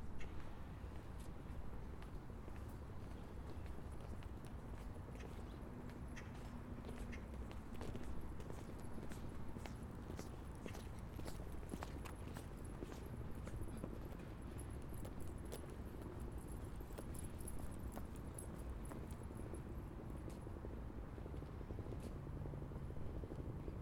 Calle Francisco Tomás y Valiente, Madrid, España - Francisco Tomás y Valiente Street
The Street has two roads. In the center there are trees and stone benches. I sit down on one bench and switch on the microphone. People moves around me. It can be heard steps and conversations. Men and women voices. One bicycle pass. There are two girls speaking English. Someone coughs. One man is dragging a trolley in the way to the station. Another bicycle: this time the wheels sound can be heard. Someone dragging other trolley. Steps of rubber boots.
Recorded with a Zoom H4n